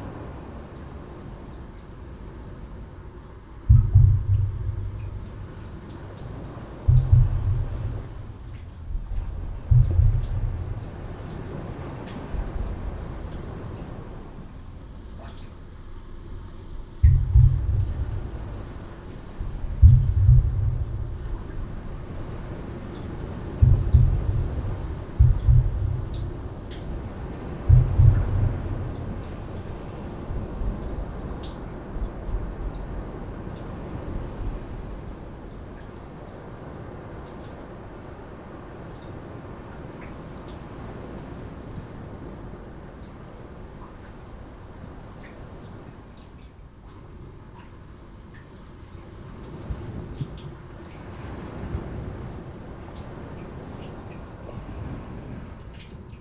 Stalos, Crete, in a concrete tube
some tube coming to the beach...you can hear the main street of the town through it